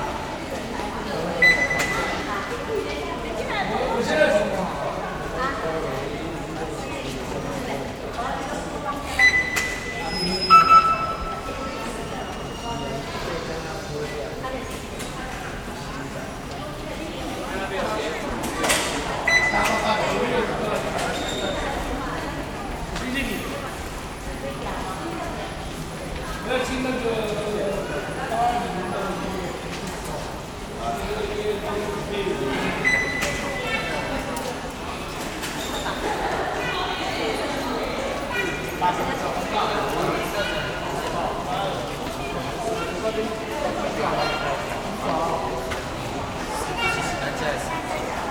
Sinsing, Kaohsiung - Formosa Boulevard Station